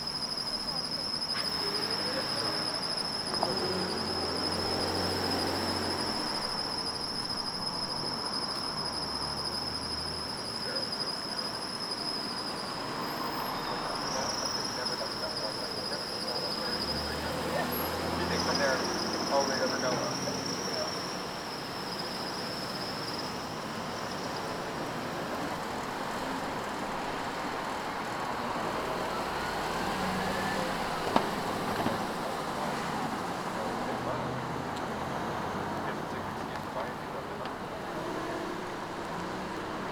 New Paltz, NY, USA - Plattekill and Main Street Corner
This is the main intersection of downtown New Paltz. It was taken outside the local Starbucks during a time where traffic was continuous and people were walking freely downtown. The recording was taken using a Snowball condenser mic with a sock over top to reduce the wind. It was edited using Garage Band on a MacBook Pro.